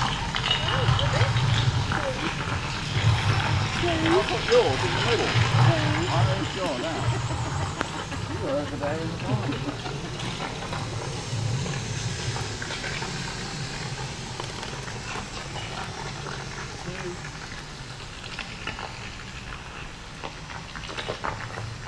Alnwick Gardens, Alnwick, UK - A canter round the adventure golf course ...
A canter round the adventure golf course ... Alnwick Gardens ... lavalier mics clipped to baseball cap ... background noise ... voices ...